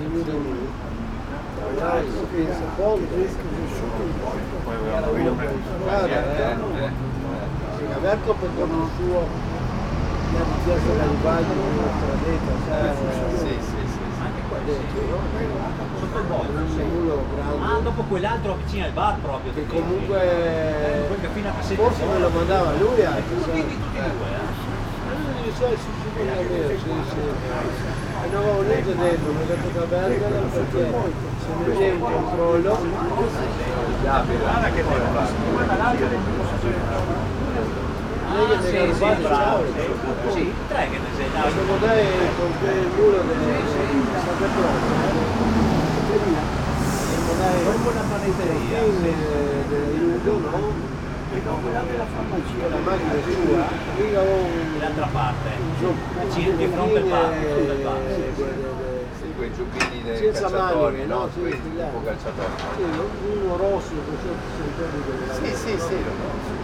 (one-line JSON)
{"title": "caffe, via di Romagna, Trieste, Italy - triestine dialect", "date": "2013-09-05 11:24:00", "description": "coffee bar close to the street, seniors talking triestino and enjoying ”nero”\nproject ”silent spaces”", "latitude": "45.66", "longitude": "13.78", "altitude": "13", "timezone": "Europe/Rome"}